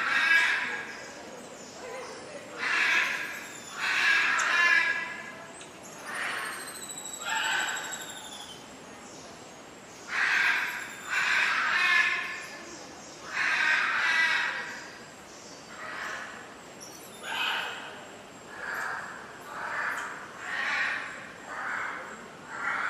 Montreal: Biodome - Biodome
equipment used: Nagra Ares MII
Monkeys & parrots inside the Biodome
QC, Canada, 24 June 2008, 14:18